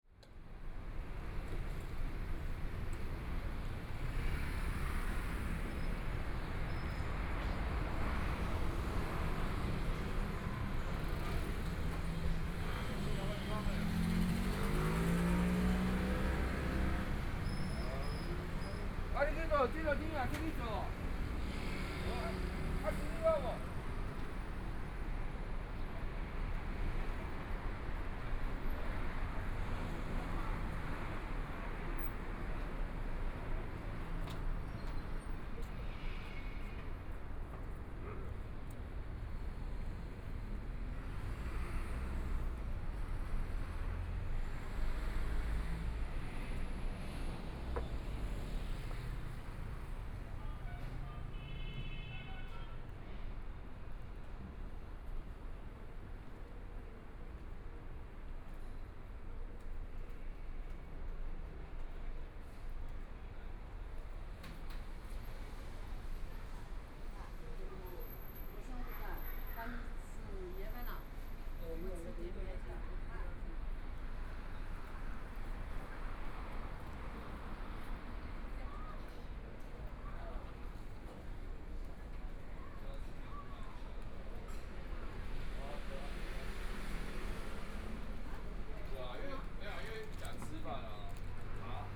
Jilin Rd., Taipei City - Walking on the road
Walking on the road, from Nong'an St. to Minquan E. Rd., A variety of restaurants and shops, Pedestrian, Traffic Sound, Motorcycle sound
Binaural recordings, ( Proposal to turn up the volume )
Zoom H4n+ Soundman OKM II